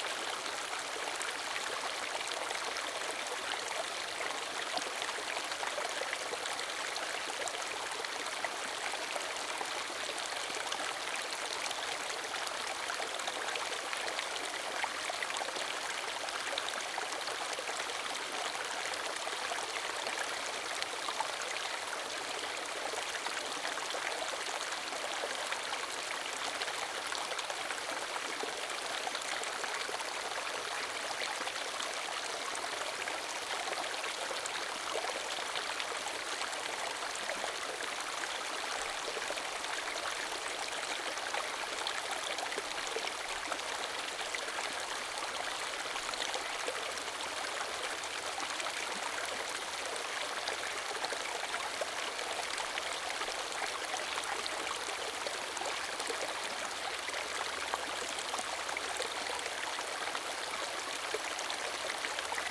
中路坑溪, 桃米里 Puli Township - Stream sound
Stream sound
Zoom H2n Spatial audio
Puli Township, 投68鄉道73號, 13 July 2016, ~07:00